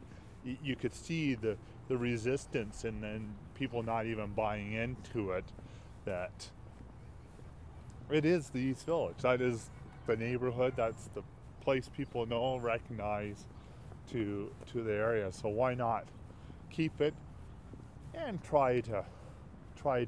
Through telling and sharing stories about the East Village, the project gives space to experiences and histories that are not adequately recognized.
AB, Canada, 1 March 2012